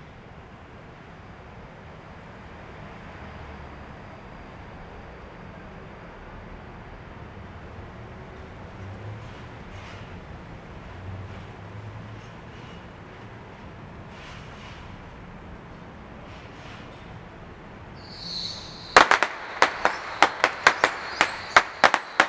Yonghe, New Taipei City - Firework
Firework, Sony ECM-MS907, Sony Hi-MD MZ-RH1